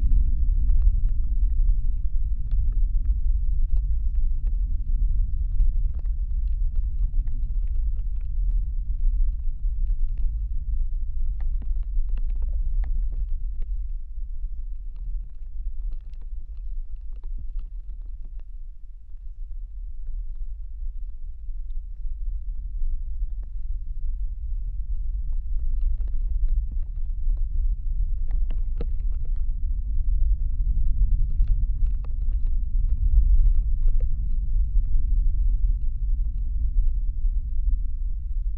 Utena, Lithuania, dried hops
winter. mild wind. contact mics on a wild dried hops
4 February 2019